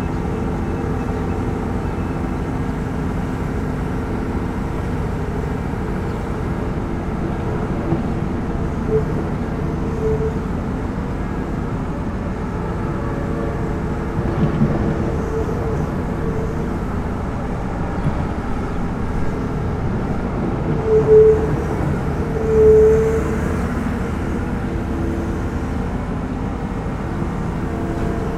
berlin: liberdastraße - the city, the country & me: construction site for a new supermarket
excavator disposing the debris of the demolished supermarket
the city, the country & me: march 6, 2012
Berlin, Germany, 6 March 2012